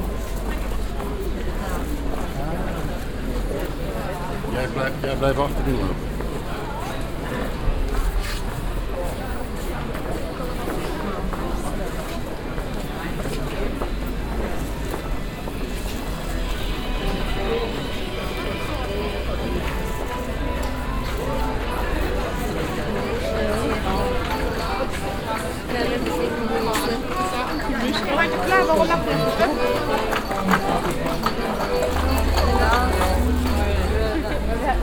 cologne, unter taschenmacher, puppenspieler
abends inmitten regem altstadt publikum in der engen kopfsteinpflastergasse - ein puppenspieler mit akkordeon
soundmap nrw -
social ambiences/ listen to the people - in & outdoor nearfield recordings
altstadt, unter taschenmacher, 23 December 2008, 17:39